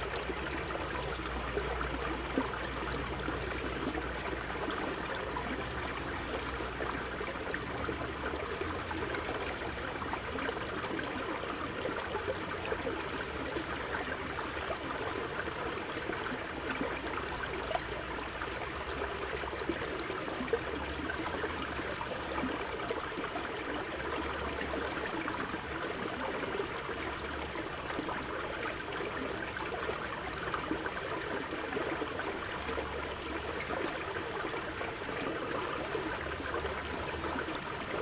{
  "title": "桃米農業體驗區, 埔里鎮桃米里 - Sound of water",
  "date": "2016-06-07 15:46:00",
  "description": "Cicada sounds, Bird sounds, Sound of water, Aqueduct\nZoom H2n MS+XY",
  "latitude": "23.94",
  "longitude": "120.93",
  "altitude": "473",
  "timezone": "Asia/Taipei"
}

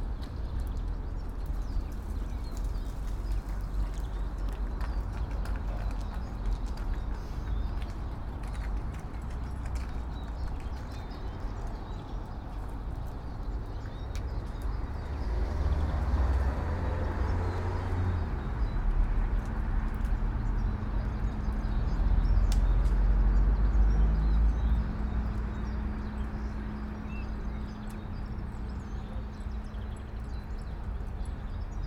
{
  "title": "all the mornings of the ... - mar 17 2013 sun",
  "date": "2013-03-17 09:08:00",
  "latitude": "46.56",
  "longitude": "15.65",
  "altitude": "285",
  "timezone": "Europe/Ljubljana"
}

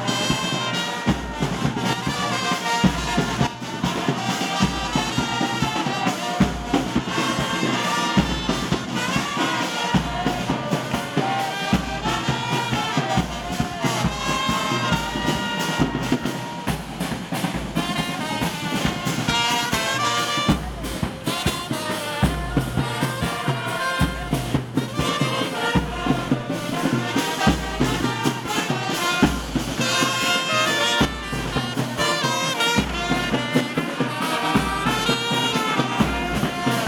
{
  "title": "Unnamed Road, Kpando, Ghana - street brass in Kpando",
  "date": "2004-08-16 15:45:00",
  "description": "street brass in Kpando",
  "latitude": "6.99",
  "longitude": "0.30",
  "altitude": "152",
  "timezone": "Africa/Accra"
}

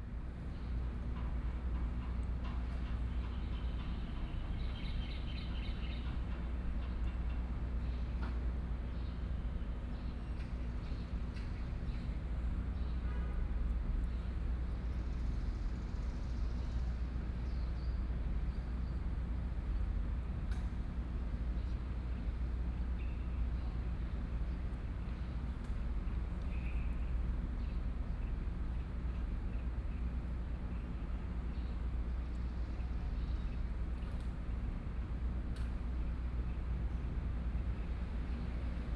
{
  "title": "Minrong Park, Taipei City - Quiet little park",
  "date": "2014-04-27 13:16:00",
  "description": "Quiet little park\nSony PCM D50+ Soundman OKM II",
  "latitude": "25.04",
  "longitude": "121.54",
  "altitude": "14",
  "timezone": "Asia/Taipei"
}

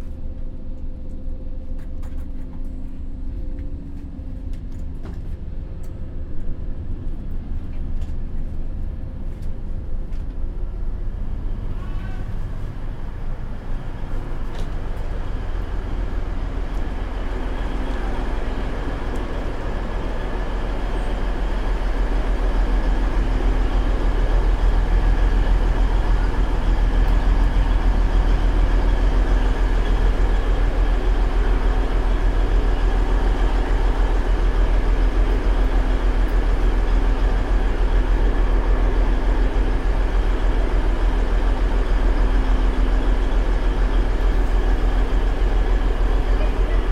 {
  "title": "Weimar, Weimar, Germany - Weimar train stop ambience inside out",
  "date": "2020-07-27 22:30:00",
  "description": "voice, drones, expanded space, spatial transitions, people.\nRecording Gear: Zoom F4 field recorder, LOM MikroUsi Pro.",
  "latitude": "50.99",
  "longitude": "11.33",
  "altitude": "239",
  "timezone": "Europe/Berlin"
}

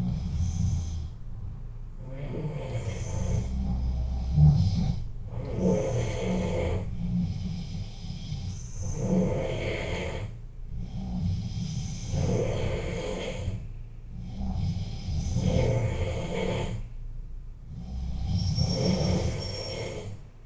{
  "title": "Dormitory, Lisboa, Portugal - (-210) Snoring concert",
  "date": "2008-08-07 01:58:00",
  "description": "Recording of a snoring concert in the middle of the night.\nRecorded with Zoom H4",
  "latitude": "38.73",
  "longitude": "-9.14",
  "altitude": "82",
  "timezone": "Europe/Lisbon"
}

